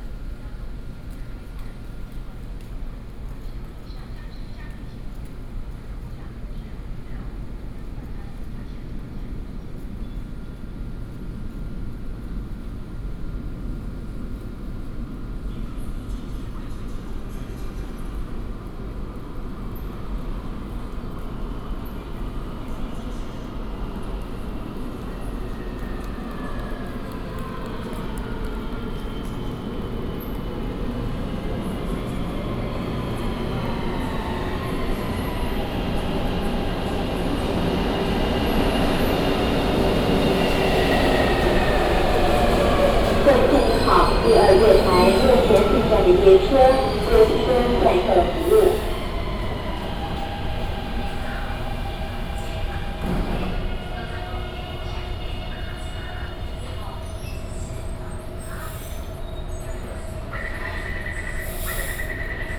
Haishan Station, New Taipei Cuty - In the station platform
In the station platform
Binaural recordings
Sony PCM D50 + Soundman OKM II